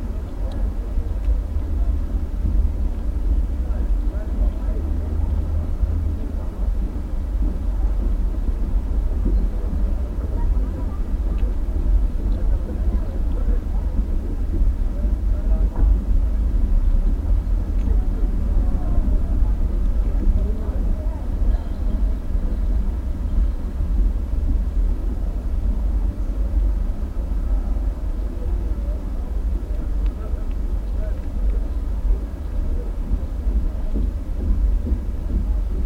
Spielbudenplatz, tankstelle, der arme teich
der arme teich, ein kleines Bassein mit Wasser, gelegen an der Reeperbahn in St. Pauli, dem Stadtviertel Hamburgs mit den wenigsten Grünflächen, stellte eine Ausnahmeerscheinung in der Reeperbahn dar. Das Bassein war von ein paar Koniferen umgeben und durch einen hohen Zaun vor dem Betreten abgesichert. Im Zuge des Umbaus des Tigerimbisses verschwand das Wasserbecken 2006. Die Aufnahmen stammen aus dem Jahr 2004 und wurden mit einem Hydrofon (Unterwassermikrofon) und einem Originalkopfmikrofon gemacht. Das Soundscape bildet zuerst den Sound unter Wasser ab und wechselt dann zu dem Geschehen über Wasser.
Hamburg, Germany, 11 December 2004